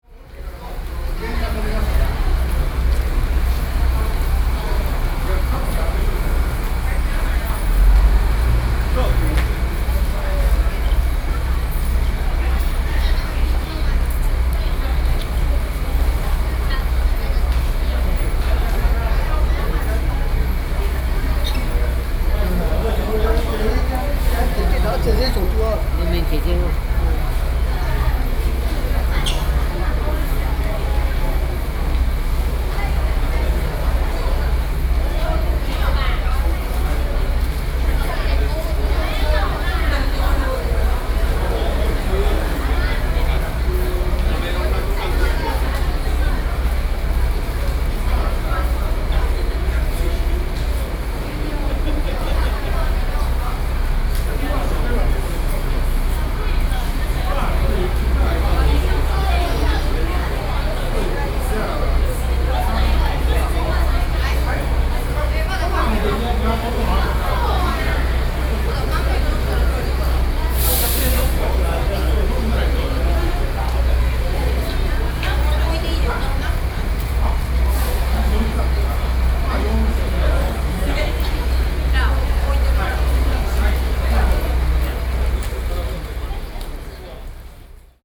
Ren'ai, Keelung - Bus station hall
Bus station hall, Sony PCM D50 + Soundman OKM II
基隆市 (Keelung City), 中華民國, 24 June